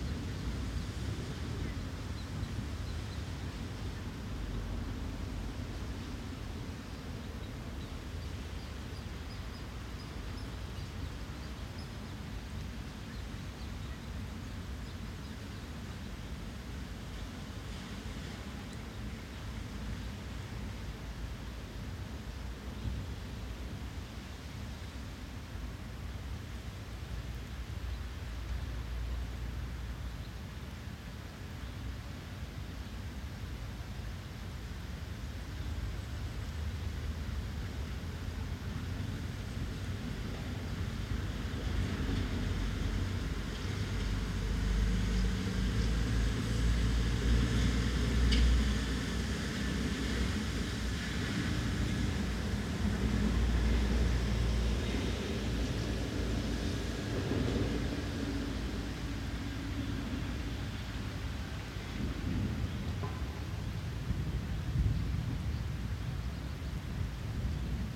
Boriso Nemcovo skveras, Žvėryno sen, Vilnius, Lietuva - Before thunderstorm
The two ponds are separated by a bridge and there are several trees nearby. The ponds are surrounded by residential houses on all sides, a street on one side and a meadow on the other. The meadow is covered with individual deciduous trees.
At the time of recording it was raining lightly, with light winds, thundering at intervals of ~1-1'30min.
Waterfowl with chicks - pochards, mallards, crows, pigeons. Occasional sounds of passing cars, people talking could be heard.
Vilniaus apskritis, Lietuva